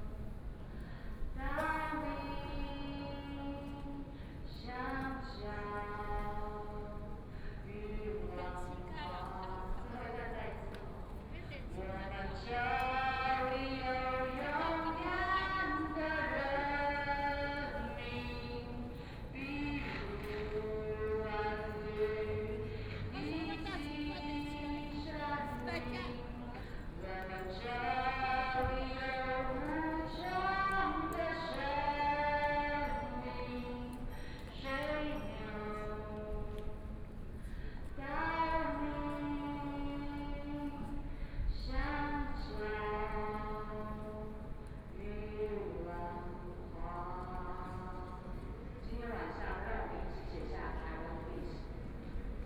Nonviolence, Occupation traffic arteries, Protest against nuclear power, The police are ready to expel the people assembled and Students, Thousands of police surrounded the people, Students sang songs, Waiting for a moment before being expelled
Sony PCM D50+ Soundman OKM II
Section, Zhōngxiào West Rd, 41號米迪卡數位有限公司